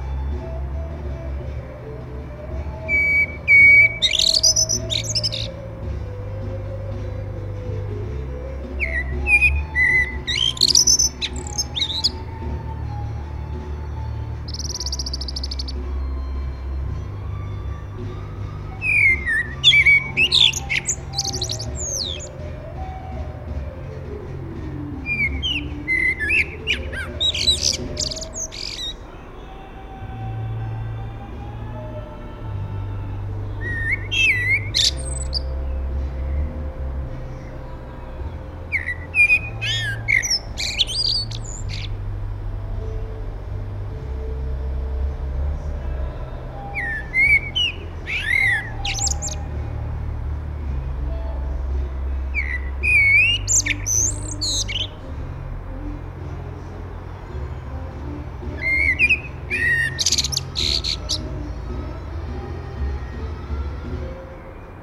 Háaleiti, Reykjavik, Iceland - The rock band and the singer
Rock band was playing somewhere in the neighborhood. Suddenly a Common Blackbird with a nest in a nearby garden arrived and started to sing. First gently as he was shy but suddenly just before the band started to play Jimmy Hendrix and Janis Joplin the bird began to sing very loud a fabulous song, something I have never heard it sing before, but this bird has been around my house for some years now.
This was recorded with Parabolic dish with Shure MX391/O capsules with Sound Professionals PIP-Phantom power adapter connected to Sound devices 744T recorder.
More information and longer version can be found here: